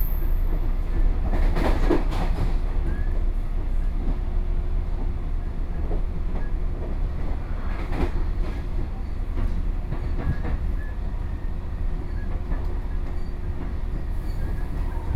Hukou Township, Hsinchu County - In a local train
In a local train, on the train, Binaural recordings
湖口鄉 (Hukou), 中華民國, 18 May 2013